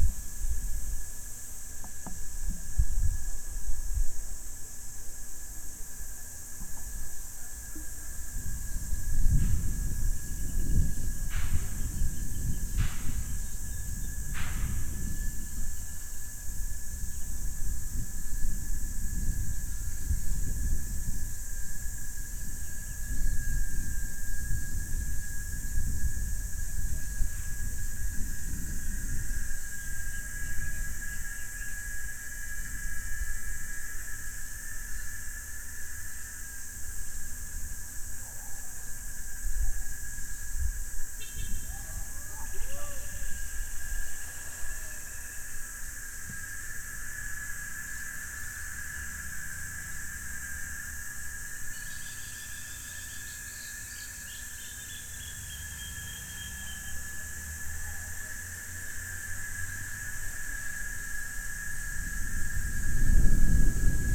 Universidade Federal do Recôncavo da Bahia - Avenida Alberto Passos, 294 - Centro, BA, 44380-000 - Pé de Sumauma da UFRB
Captação feita com base da disciplina de Som da Docente Marina Mapurunga, professora da Universidade Federal do Recôncavo da Bahia, Campus Centro de Artes Humanidades e Letras. Curso Cinema & Audiovisual. CAPTAÇÃO FOI FEITA COM UM PCM DR 50.PÉ DE SUMAUMA DA UFRB PRÓXIMO A REITORIA EM CRUZ DAS ALMAS-BAHIA.